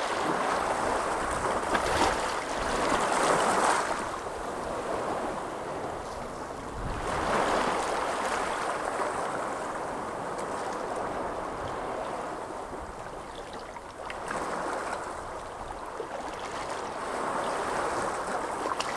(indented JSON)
{
  "title": "Middle Hope Cove - Turning of the tide at Middle Hope",
  "date": "2017-05-22 16:56:00",
  "description": "This was part of a delightful walk on Sand Point...a beautiful little peninsular north of Weston Super Mare. Often very quiet, this was a warm day with a fresh breeze causing quite a lot of chop in the waters. The beach at Middle Hope is shingle so the swash and backwash have some interesting notes in amongst the wetness",
  "latitude": "51.39",
  "longitude": "-2.96",
  "altitude": "2",
  "timezone": "Europe/London"
}